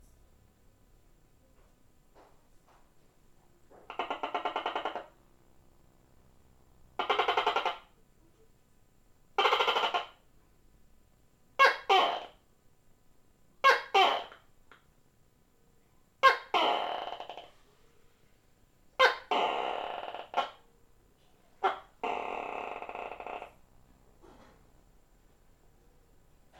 Tokae lizard under a wardrobe in our house - minidisc recorder with sony ECM907 microphone. location on the map is approximative.